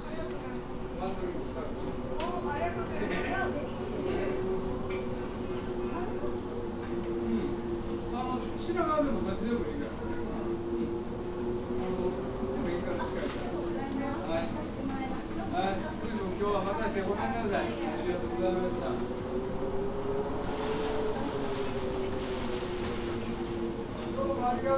Osaka, Umeda Sky Building B2 level - wind from the elevator
recorded in the basement level of Umeda Sky Building. wind coming from elevator shafts whining in a slit between two sliding doors. many people around waiting in lines for a table in restaurants. level B2 is a food court.